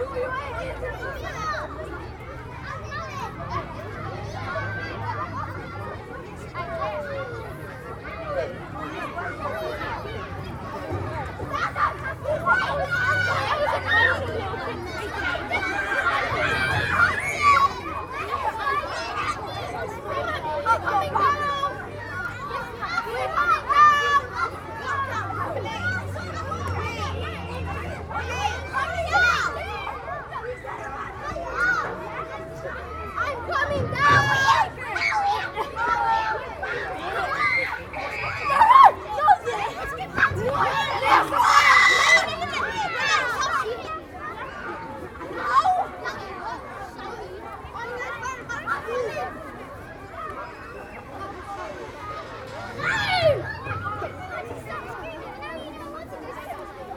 Kilburn Grange Park, Kilburn, London - Kilburn Grange Park playground
Kids playing after school.
19°C
16 km/hr 230
Greater London, England, United Kingdom, 30 March 2021